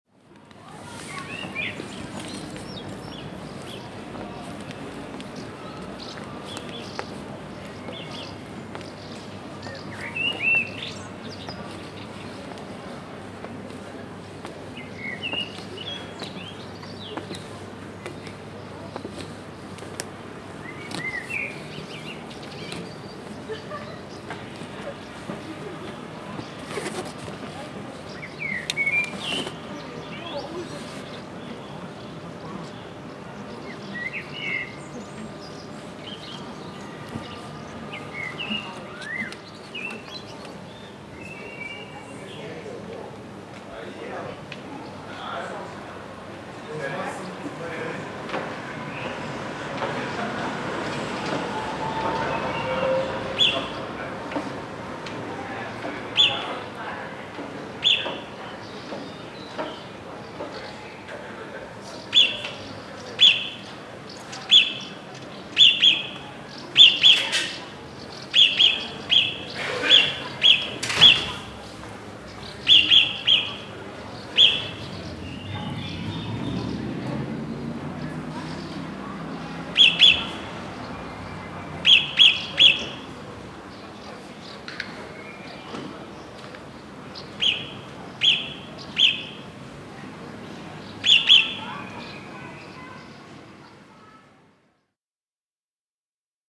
at the entrance to the funicular, center of Zagreb - layers of history
birds, pedestrians, trams, funicular (120 years old, one of the shortest in the world)